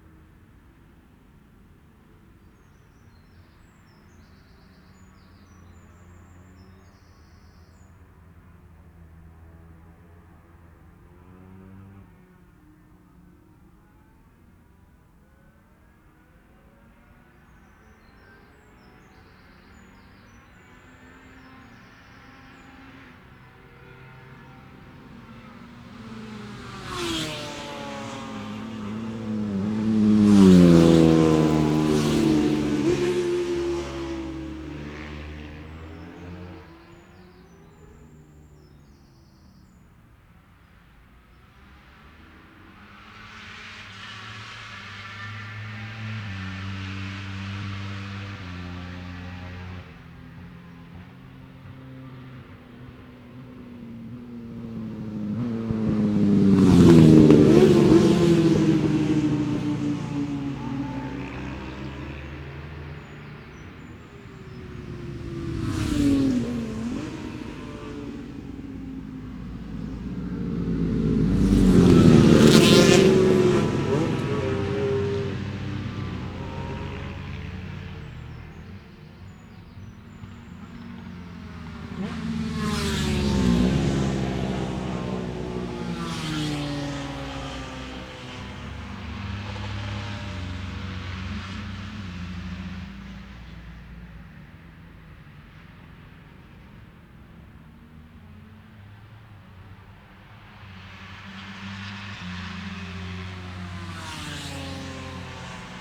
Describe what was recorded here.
Cock o' the North Road Races ... Oliver's Mount ... Ultra lightweight / Lightweight motorbike practice ...